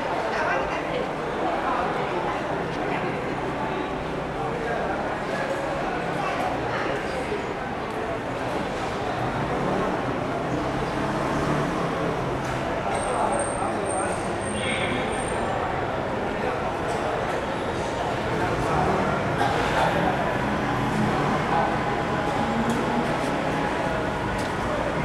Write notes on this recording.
Fruits and vegetables wholesale market, Sony Hi-MD MZ-RH1 +Sony ECM-MS907